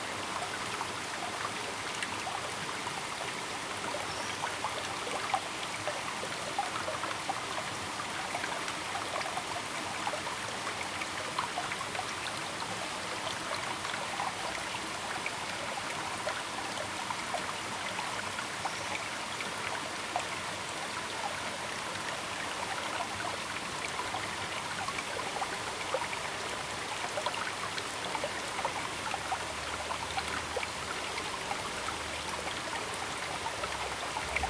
中路坑, 桃米里, Puli Township - small waterfall and Stream
small waterfall, small Stream
Zoom H2n MS+XY
Nantou County, Taiwan, 16 September 2016, 12:03